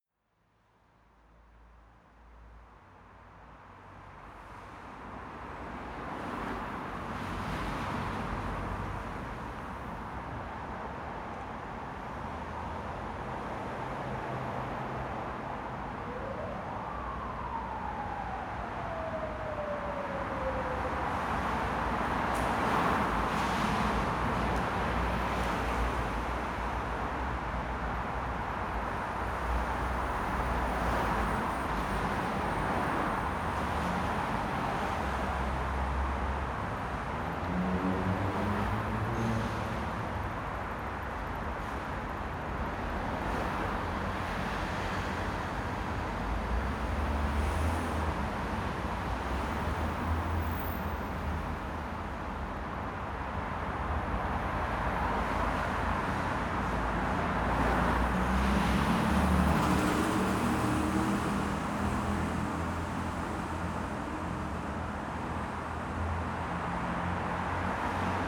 대한민국 서울특별시 서초구 잠원동 반포지하차도 - Banpo Underground Roadway
Banpo Underground Roadway, Tunnel, Cars and Motorcycles passing by
반포지하차도, 자동차, 오토바이